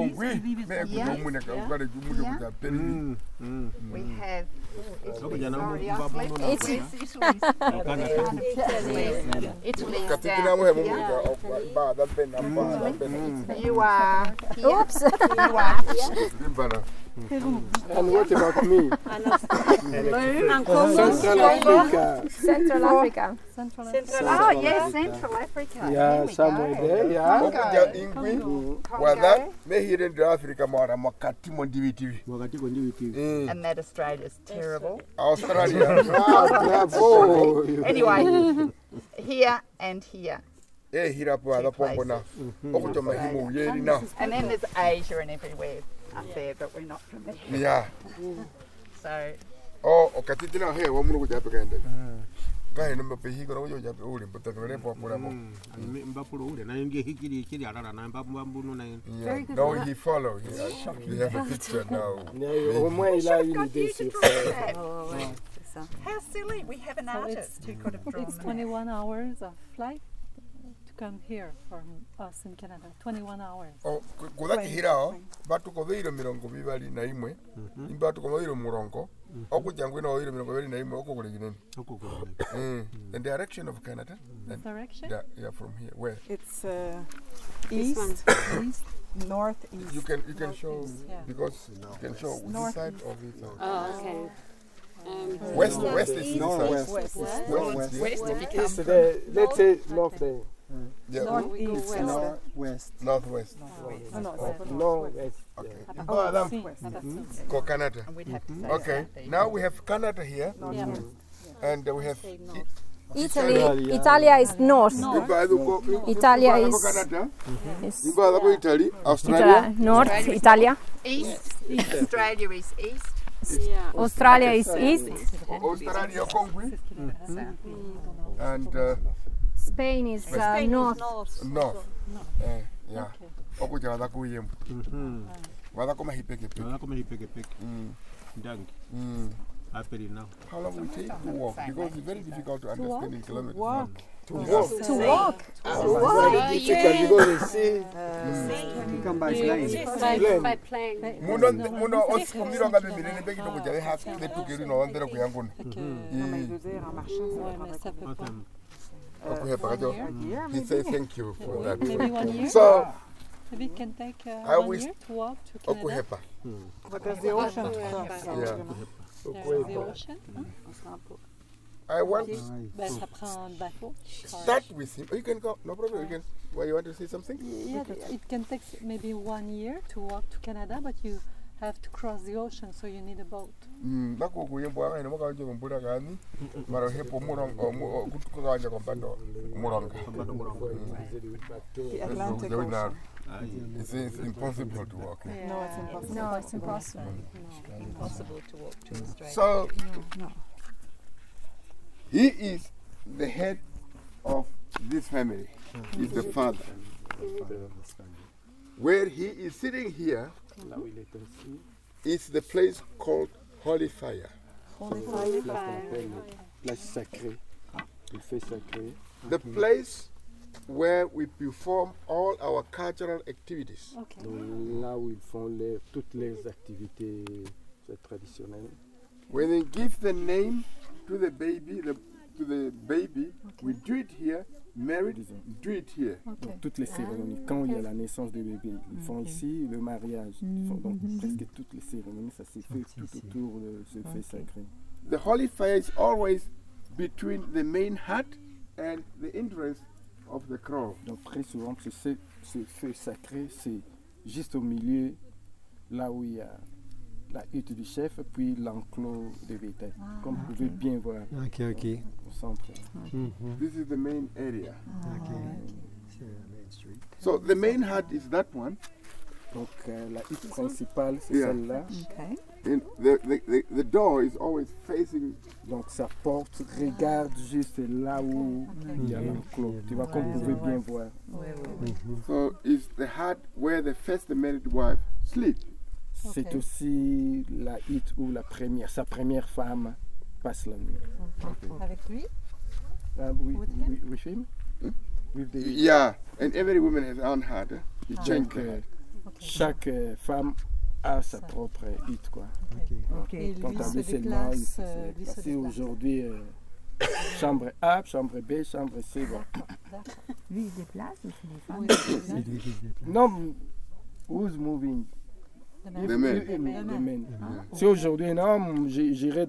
Kunene, Namibia - Himba chief long interwiew
I was in Namibia, the Kunene Region, camping with a group of 9 people from different countries. Here you can listen to our official meeting with the chief of an Himba Village, close to the Opuwo city. Our Himba guide translates for us chief's questions and gives him back our answers and our questions. The Himba chief was very curious to know how could 10 people from different places meet to be there all together. He was also curious about our countries, how far they were (in walking days!). He could not imagine people non having any children, or man having just one wife... His second wife joined us and you will hear us greeting her saying "Moro!" which means hallo in Himba.
You can hear our guide explaining in details how the chief id dressed, the special giraffe-leather shoes he has, etc. Then he shows us the way the woman are dressed and coloured with the special mix of Ocra anf animal fat, which is typical of the Himba women.